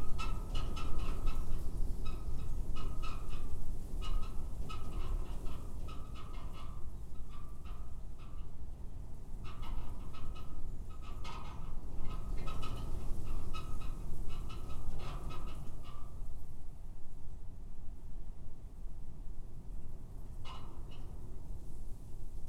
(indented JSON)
{
  "title": "Field off Barric Lane, Eye, Suffolk, UK - rusty, abandoned sugarbeet harvester",
  "date": "2022-04-21 14:00:00",
  "description": "rusty, abandoned sugarbeet harvester, warm sunny day in April with winds gusting across field of Spring wheat with wren.",
  "latitude": "52.29",
  "longitude": "1.16",
  "altitude": "52",
  "timezone": "Europe/London"
}